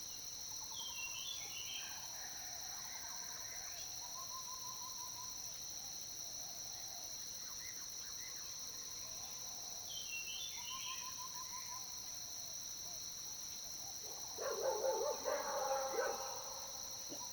中路坑生態園區, Puli Township - Bird calls
in the morning, Bird calls, Dogs barking, Insect sounds
Zoom H2n MS+XY
Nantou County, Taiwan, 10 June 2015, 07:02